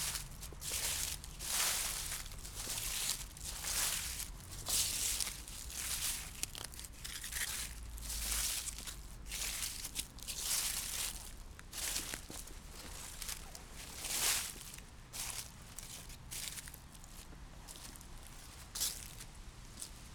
Casa del Reloj, dry leaves
walking over cruncy dry leaves